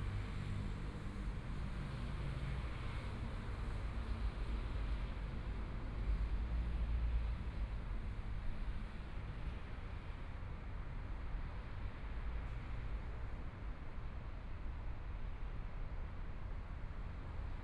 {
  "title": "台北市中山區中央里 - Environmental sounds",
  "date": "2014-02-06 17:45:00",
  "description": "Environmental sounds, The house has been demolished, Now become a temporary park, The future will be built into the building, Motorcycle sound, Traffic Sound, Binaural recordings, Zoom H4n+ Soundman OKM II",
  "latitude": "25.05",
  "longitude": "121.54",
  "timezone": "Asia/Taipei"
}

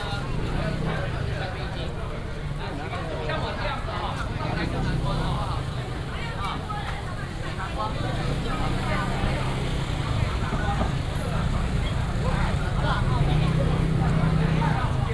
{
  "title": "Shuanghe St., Wanhua Dist., Taipei City - Walking in the traditional market",
  "date": "2017-04-28 16:39:00",
  "description": "Walking in the traditional market, traffic sound",
  "latitude": "25.03",
  "longitude": "121.50",
  "altitude": "9",
  "timezone": "Asia/Taipei"
}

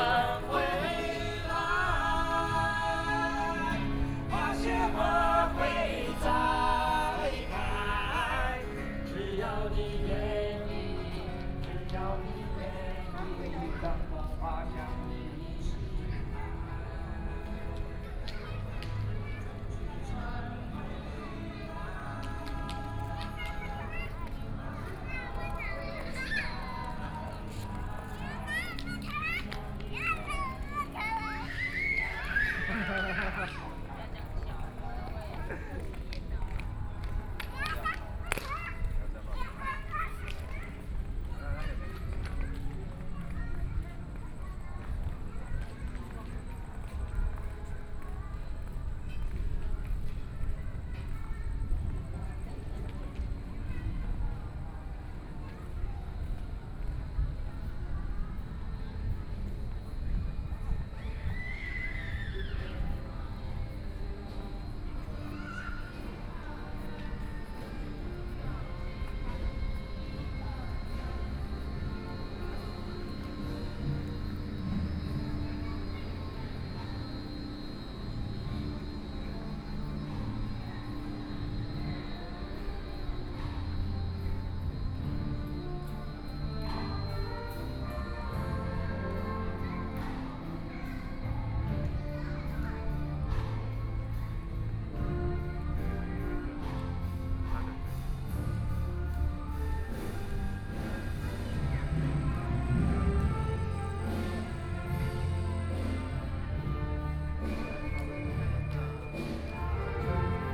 {
  "title": "Hualien Cultural Creative Industries Park - Walking through the park",
  "date": "2014-08-28 20:27:00",
  "description": "Various shops voices, Tourists, Winery transformed into exhibition and shops",
  "latitude": "23.98",
  "longitude": "121.60",
  "altitude": "13",
  "timezone": "Asia/Taipei"
}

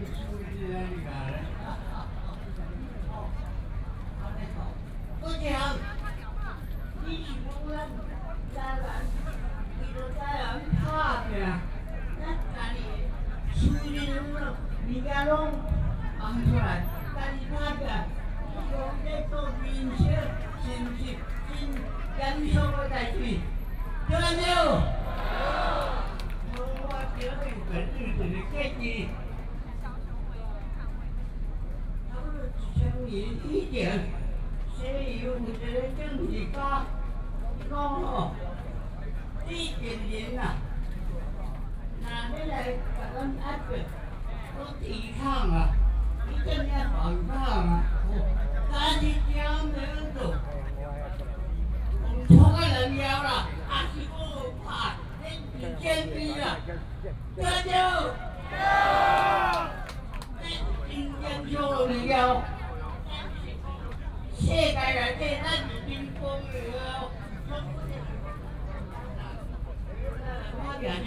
{
  "title": "Taipei, Taiwan - Speech",
  "date": "2014-04-19 22:18:00",
  "description": "Long-term push for Taiwan independence, The current political historians have ninety-year-old\nBinaural recordings, Sony PCM D50 + Soundman OKM II",
  "latitude": "25.04",
  "longitude": "121.52",
  "altitude": "11",
  "timezone": "Asia/Taipei"
}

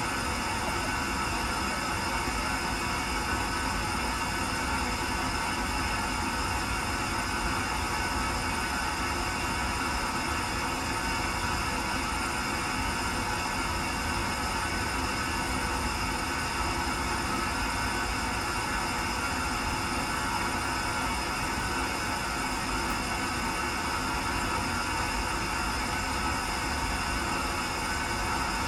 {
  "title": "Rhinstraße, Berlin, Germany - Hissing pipes amongst trees, normal & contact mic mix",
  "date": "2020-12-18 15:30:00",
  "description": "Groups of large green heating pipes snake around this area, overhead, at path level and emerging or disappearing underground. An intriguing network. Sometimes they hiss very smoothly. This was a smaller silver pipe recorded normal and with a contact mic simultaneously.",
  "latitude": "52.52",
  "longitude": "13.52",
  "altitude": "51",
  "timezone": "Europe/Berlin"
}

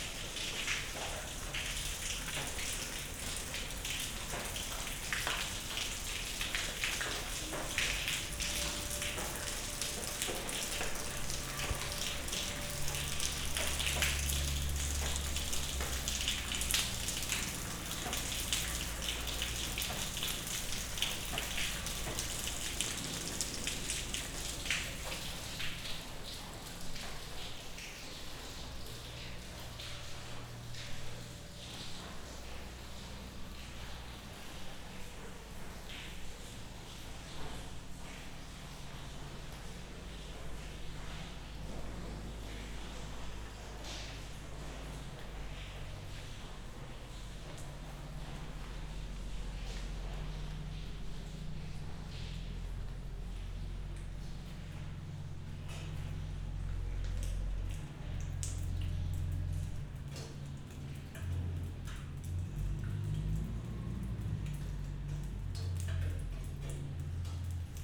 {
  "title": "Punto Franco Nord, Trieste, Italy - walk in former workshop, rain",
  "date": "2013-09-11 14:30:00",
  "description": "walk through derelict workshop building, rain drops falling from the broken ceiling. (SD702, AT BP4025)",
  "latitude": "45.66",
  "longitude": "13.77",
  "altitude": "2",
  "timezone": "Europe/Rome"
}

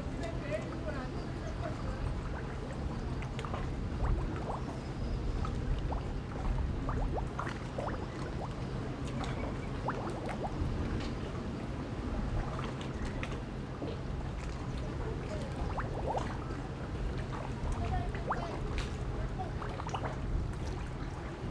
{
  "title": "Magong City, Penghu County - In the dock",
  "date": "2014-10-22 14:03:00",
  "description": "In the dock, Waves and tides\nZoom H6 +Rode NT4",
  "latitude": "23.54",
  "longitude": "119.54",
  "altitude": "4",
  "timezone": "Asia/Taipei"
}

